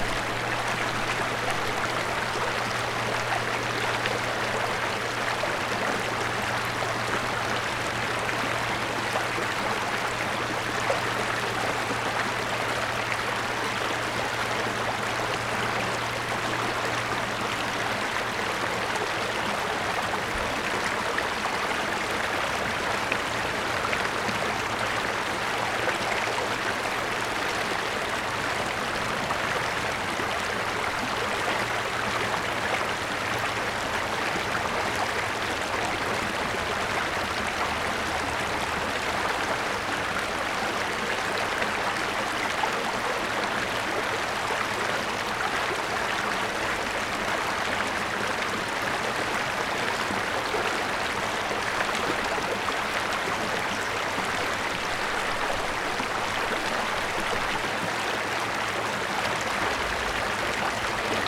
Sur une pierre dans le lit du Sierroz au plus bas.
France métropolitaine, France